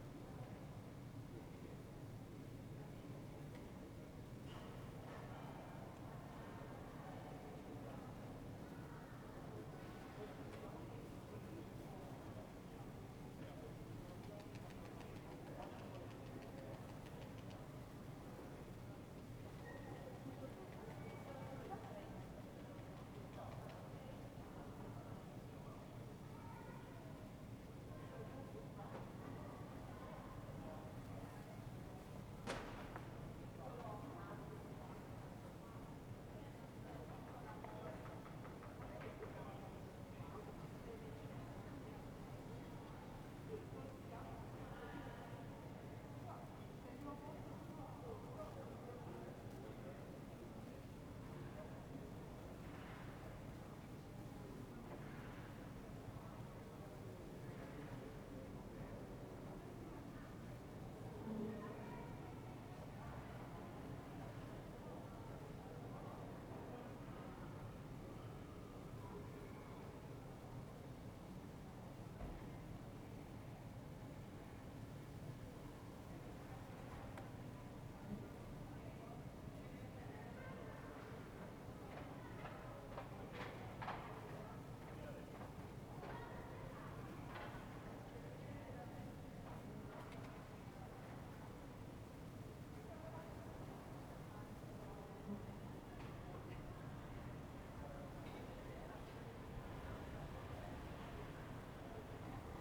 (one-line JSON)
{"title": "Ascolto il tuo cuore, città, I listen to your heart, city. Several chapters **SCROLL DOWN FOR ALL RECORDINGS** - Friday afternoon with barkling dog in the time of COVID19 Soundscape", "date": "2020-04-24 17:03:00", "description": "\"Friday afternoon with barkling dog in the time of COVID19\" Soundscape\nChapter LV of Ascolto il tuo cuore, città. I listen to your heart, city\nFriday April 24th 2020. Fixed position on an internal terrace at San Salvario district Turin, forty five days after emergency disposition due to the epidemic of COVID19.\nStart at 5:03 p.m. end at 5:35 p.m. duration of recording 31’59”", "latitude": "45.06", "longitude": "7.69", "altitude": "245", "timezone": "Europe/Rome"}